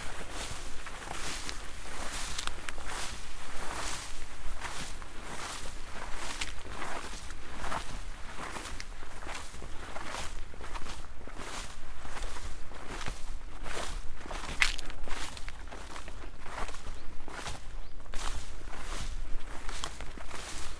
walk, Šturmovci, Slovenia - textures
walk through stiff and soft grasses